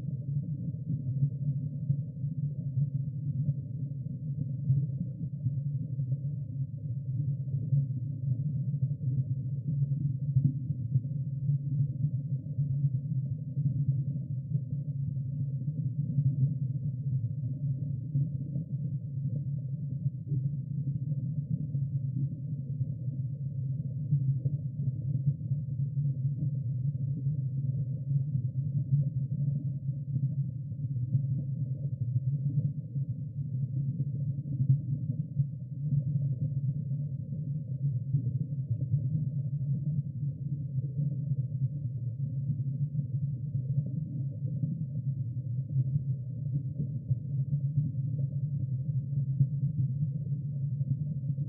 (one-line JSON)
{"title": "El Sauzal, Santa Cruz de Tenerife, España - Lavaderos del Sauzal (stereo hydrophone)", "date": "2015-07-22 22:49:00", "latitude": "28.48", "longitude": "-16.44", "altitude": "297", "timezone": "Atlantic/Canary"}